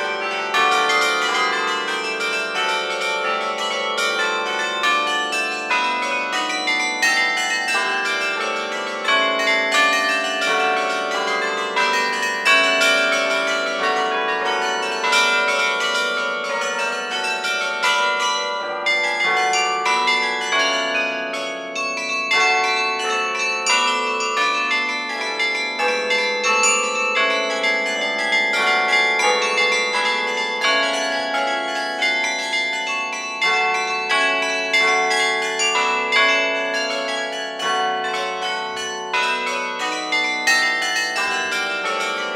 Rue de la Maurienne, Dunkerque, France - Carillon de Dunkerque
Dunkerque (département du Nord)
Carillon - beffroi du Dunkerque
Maître carillonneur : Monsieur Alfred Lesecq
France métropolitaine, France, 27 June